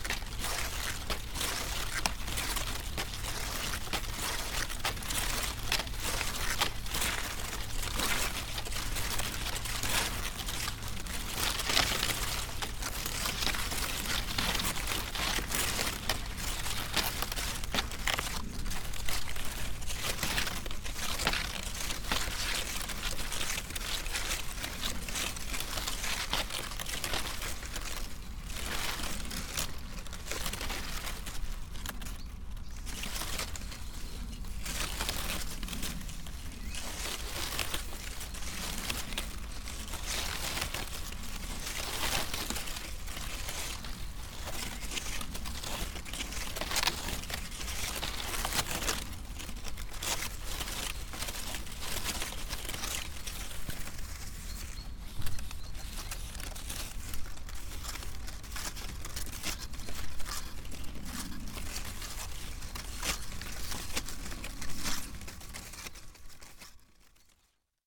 playing with few dry corn stalks - leftovers on the edge of the field
Markovci, Slovenia, 30 September 2012, 16:30